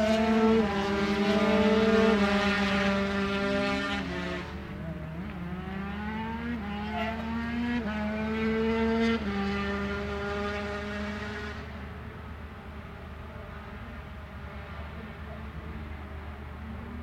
British Motorcycle Grand Prix 2002 ... 125 free practice ... one point stereo mic to minidisk ...
12 July 2002, 09:00